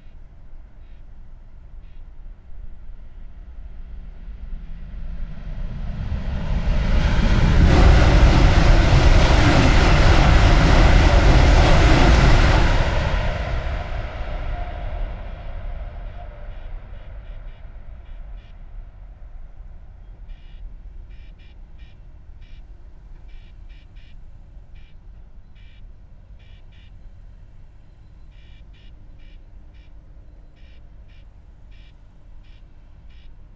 ICE station, Limburg an der Lahn, Deutschland - high speed train
quiet station ambiance, then a ICE3 train rushes through at high speed
(Sony PCM D50, Primo EM172)
18 July 2017, 11:30